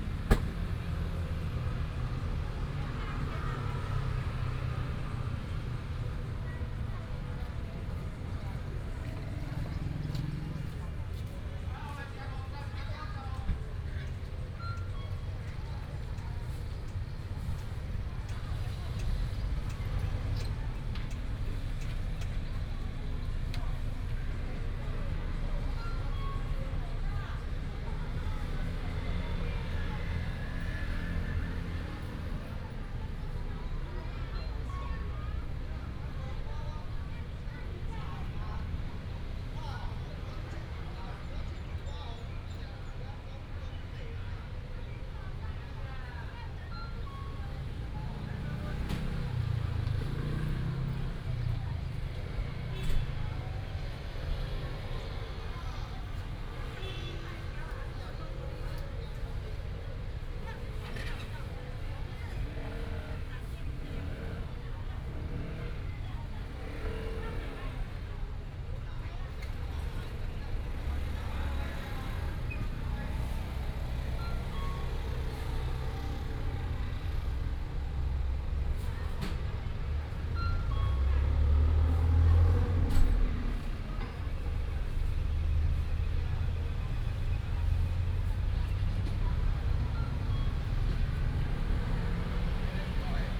Zhongshan Rd., Houlong Township 苗栗縣 - At the intersection
In front of the convenience store, At the intersection, Traffic sound, Market sound